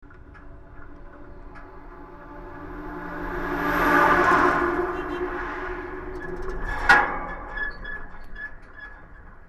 Batman Bridge, Tasmania, logging truck
Hillwood TAS, Australia